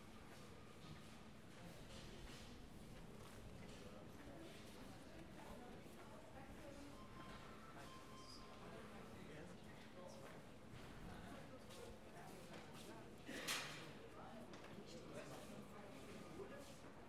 Friedrichshain, Berlin, Germany - Catching the underground-train from Frankfurter Allee to Frankfurter Tor
ubahn and bahnhof noises between frankfurter allee to frankfurter tor 170502-001.
recorded with zoom 4hn-sp, with wind protection.
2 May 2017, ~13:00